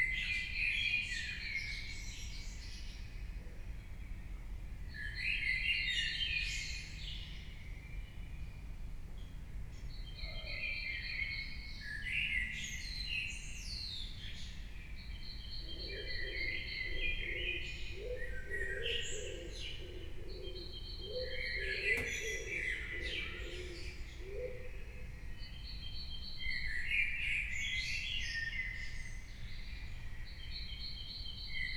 Berlin Bürknerstr., backyard window - early birds
(Sony PCM D50, Primo EM172)